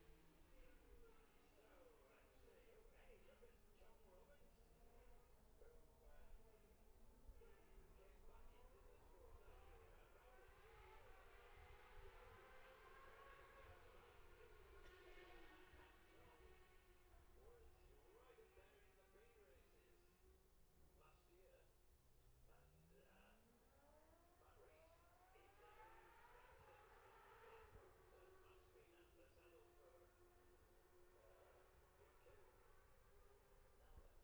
Jacksons Ln, Scarborough, UK - olivers mount road racing ... 2021 ...
bob smith spring cup ... 600cc group A and B qualifying ... dpa 4060s to MixPre3 ...